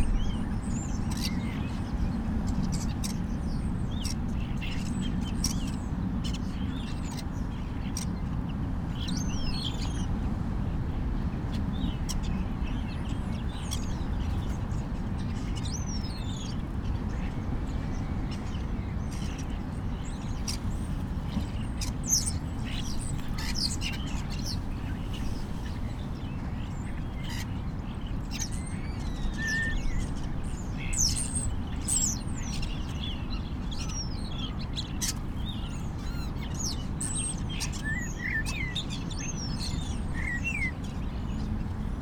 Crewe St, Seahouses, UK - Flocking starlings ...
Flocking starlings ... lots of mimicry ... clicks ... squeaks ... creaks ... whistles ... bird calls from herring gull ... lesser black-backed gull ... lavaliers clipped to a sandwich box ... background noise ... some wind blast ...